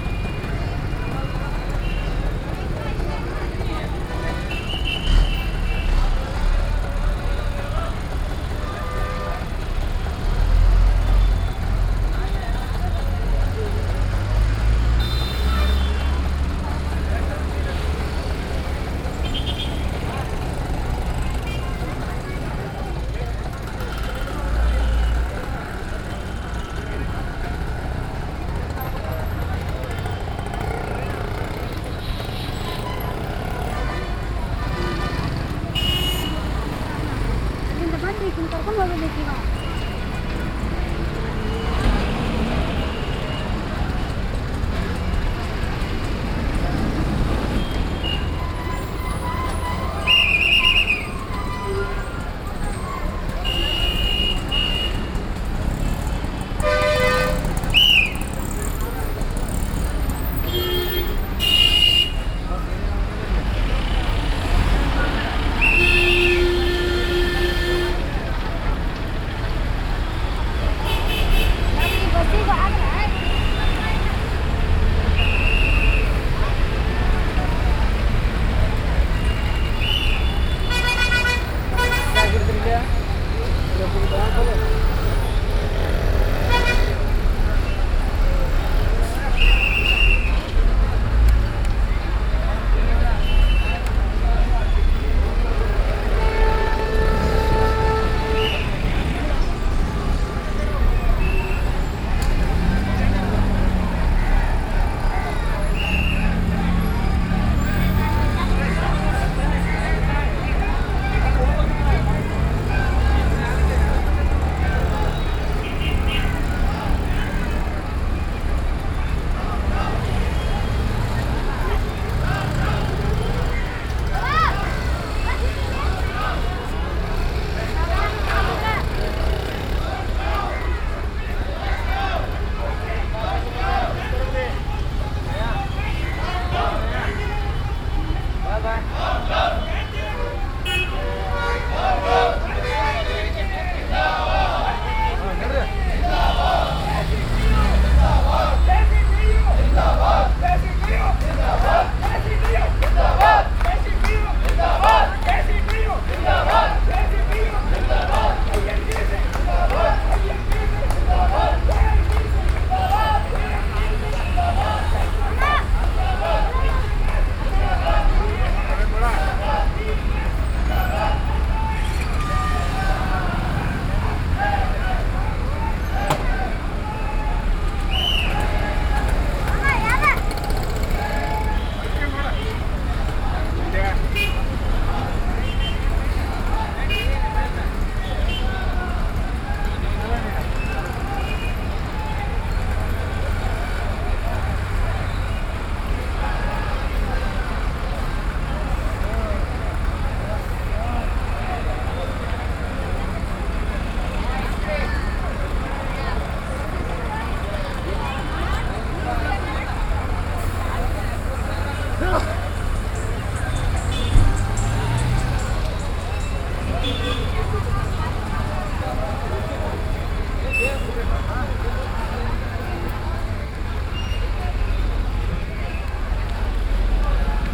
India, Karnataka, Dharwad, Old bus station, march, crowd
Hubli, Karnataka, India, 28 October 2009, ~14:00